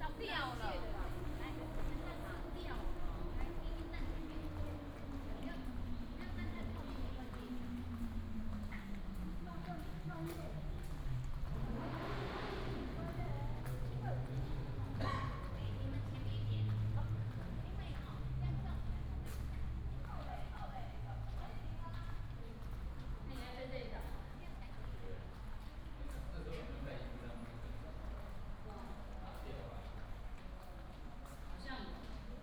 Go into the old tunnel, Tourists, Traffic sound